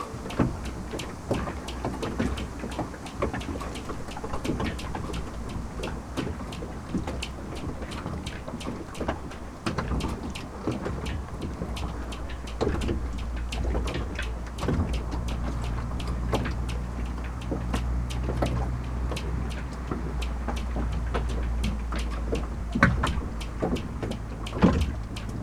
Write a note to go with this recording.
squeaking pontoons and whipping ropes on a yacht